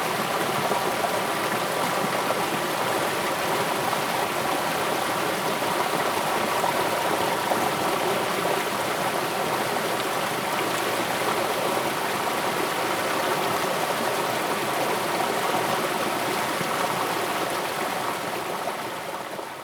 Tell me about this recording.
The sound of the river, Zoom H2n MS+XY +Spatial audio